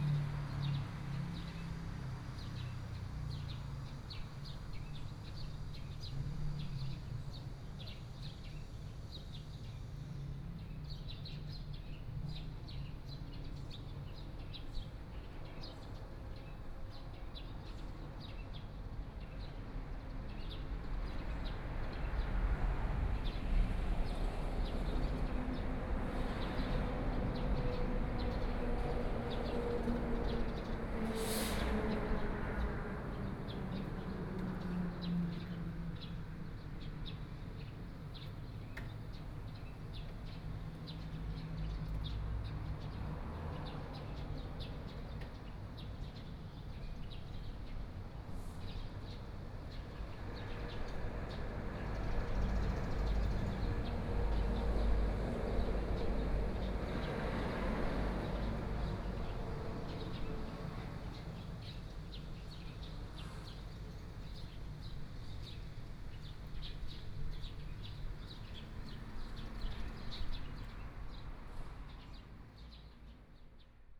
In front of the temple, Traffic Sound, Birdsong sound, Small village
Sony PCM D50+ Soundman OKM II
Yilan County, Taiwan, 26 July 2014, 14:03